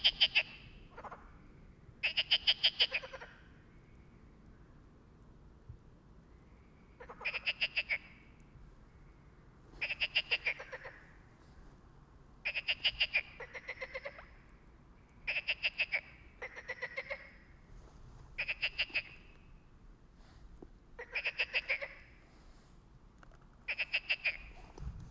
Bréhémont, France - Trying to communicate with Frogs
Nous avions établis le camp à coté d'un étang peuplé de grenouilles qui se sont mise à chanter toute la nuit.
J'ai essayé de voir si elles me répondaient mais il semblerais qu'elles n'ai rien à faire des mes interférences acoustiques...
Fun Fact: Elles se mettent cependant à chanter lorsqu'un train de marchandises passe au loin.
A little pond next to the river Loire where we tried to sleep untill a bunch of frogs begin to sing.
I was trying to induce them to sing, but they seemingly dont care...
Fun fact: they begin to sing when freights trains passes
/Oktava mk012 ORTF & SD mixpre & Zoom h4n